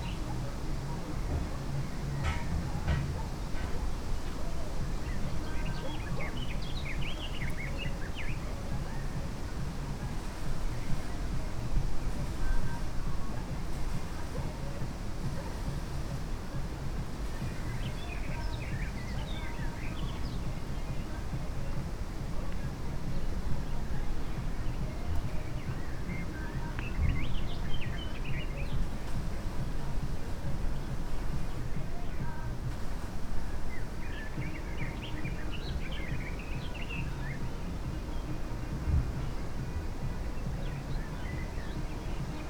Letowko, near Choczewskie Lake - welding
man welding in a shed, moving about some metal objects, radio playing disco polo music. dog barking around the property. (roland r-07)